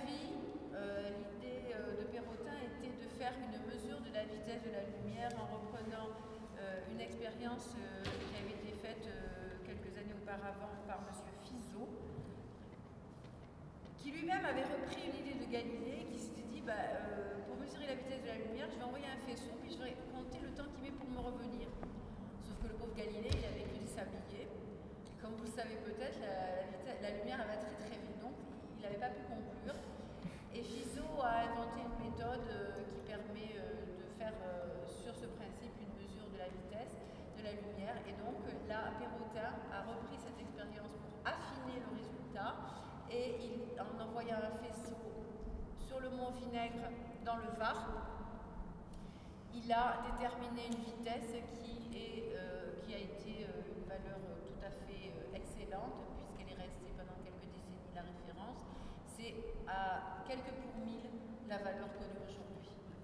L'Observatoire, Nice, France - Observatoire talk (excerpt)
A short excerpt from a tour about the observatory, taken from inside the observatory. Unfortunately the sound of the roof opening did not record very well so is not included, but in this recording you can hear the echoes of the guide's voice.
28 April 2014